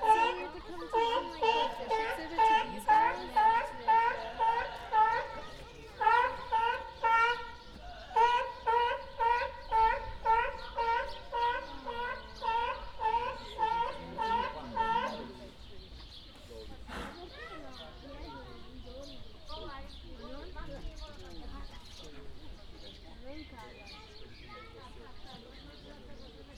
Berliner Zoo - disturbed seal
the seal was trying to convince the other seals to play with it and was very disappointed that they wouldn